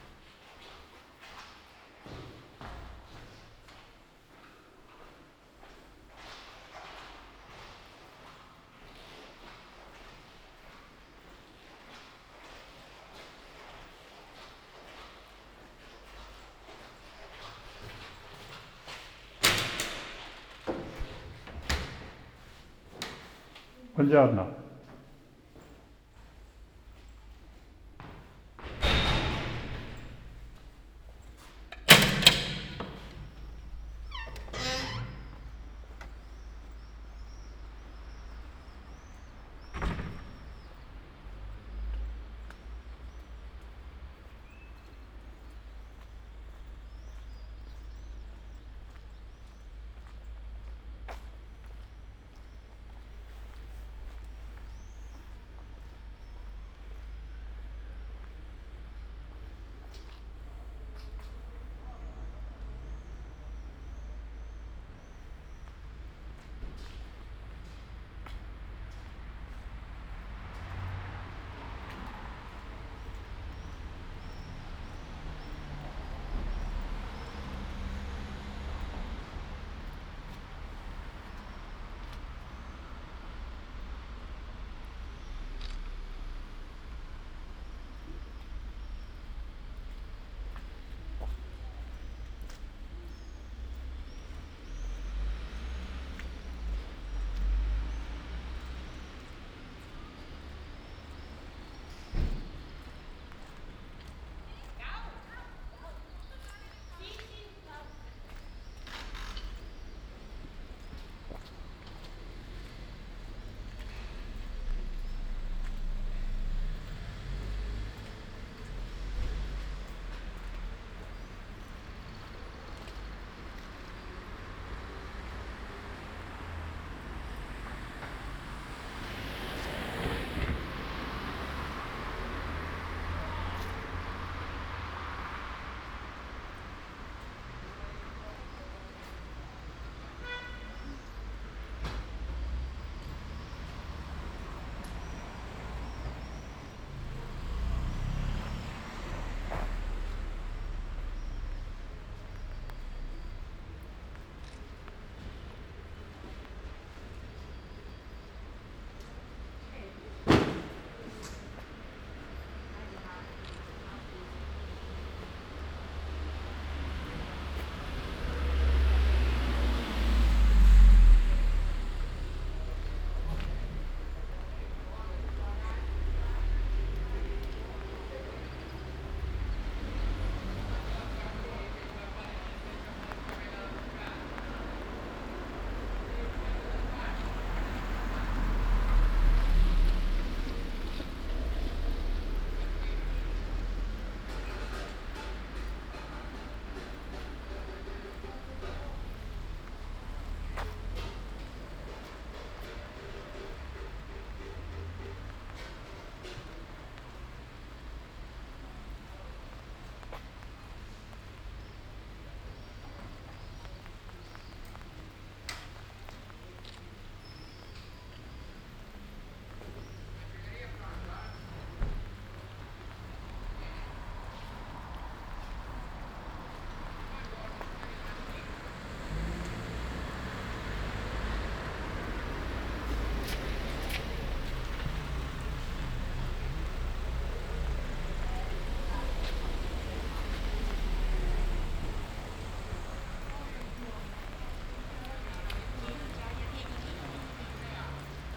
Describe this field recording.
“Posting postcards in a rainy day at the time of covid19” Soundwalk, Chapter LIX of Ascolto il tuo cuore, città. I listen to your heart, city. Tuesday April 28th 2020. Walking to outdoor market and posting postcard, San Salvario district, forty nine days after emergency disposition due to the epidemic of COVID19. Start at 11:23 a.m., end at h. 11:50 A.m. duration of recording 27’17”, The entire path is associated with a synchronized GPS track recorded in the (kml, gpx, kmz) files downloadable here: